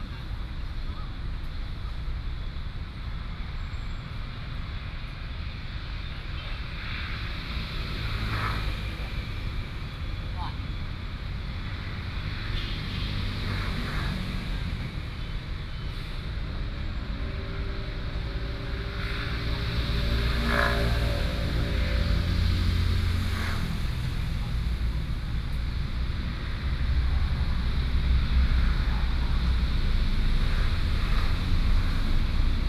員林公園, Yuanlin City - in the park
in the park, Traffic sound
Changhua County, Taiwan